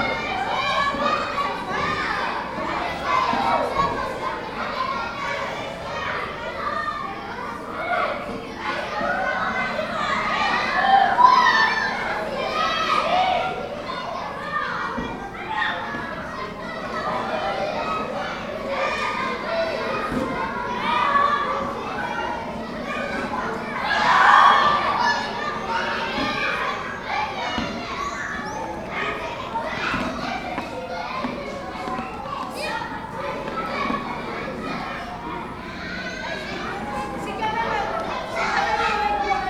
Rodez, France - La récréation
les écoliers en récréation
Schoolchildren in Recreation
May 2017